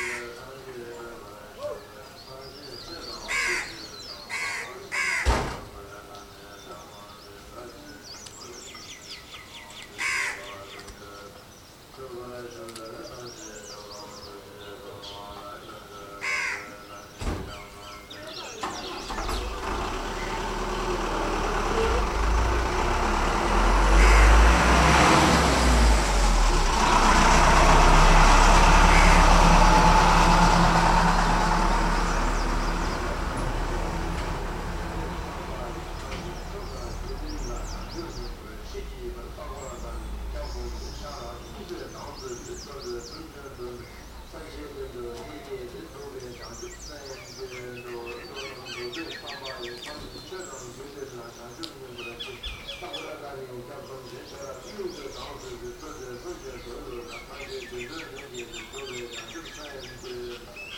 Yuksom, Sikkim, India - Yuksom morning buddhist chant broadcast
I have to guess at the time, but I know it was surprisingly early that we woke up to the sound of Buddhist chants being broadcast via loudspeaker over the village of Yuksom from the local temple. These chants went on for the whole day, and if my memory is correct also for the day after.
The chants are punctuated by percussion/horn crescendos, and interspersed with plenty of birdsong from outside the hostel window, and the occasional cockerel crow, engine or voice from the street.
Recorded on an OLYMPUS VN8600.